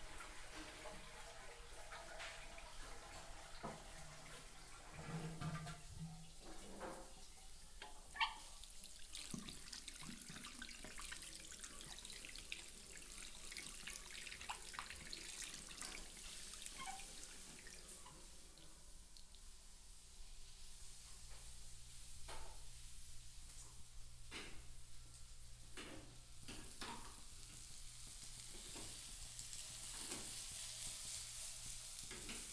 Cruz das Almas, BA, Brasil - Na casa do tio
DR-40 Tascam PCM. Som teste na casa do tio ze.